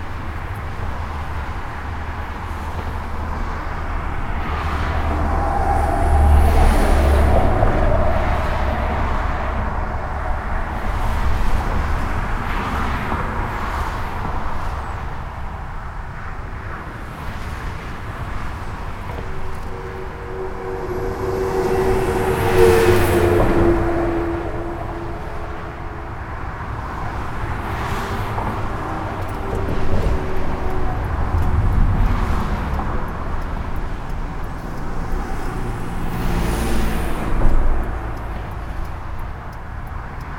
Court-St.-Étienne, Belgique - N25 à la Quenique
A dense trafic on the local highway, called N25.